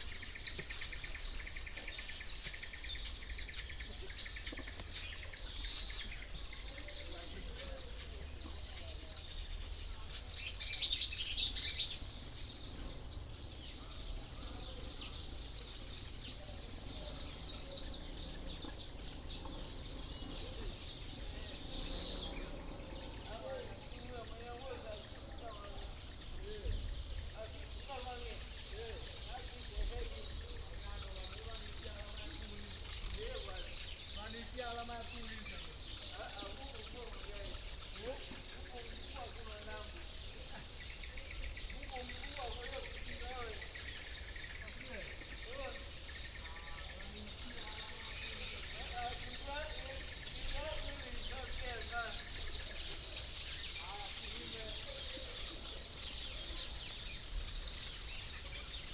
Maweni Farm, Soni, nr Lushoto, Tanzania - by the lake, with singer